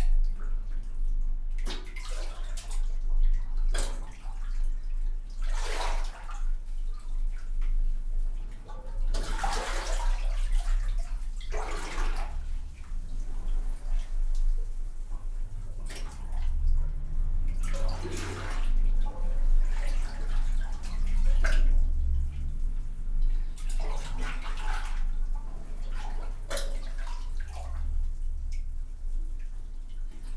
Wied Ix-Xaqqa, Birżebbuġa, Malta - water in concrete chamber, aircraft crossing
Wied Ix-Xaqqa, near Freeport Malta, concrete chamber, water flow, aircraft crossing
(SD702 DPA4060)
3 April 2017, ~14:00